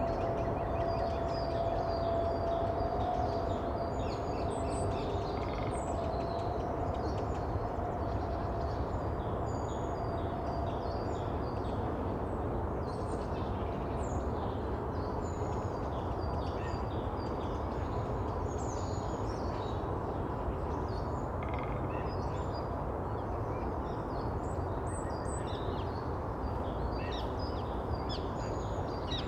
Sijsjesgaarde, Ganshoren, Belgium - Marais de Jette
recording trip with Stijn Demeulenaere and Jan Locus
Lom Uzi's + MixPre3
February 2019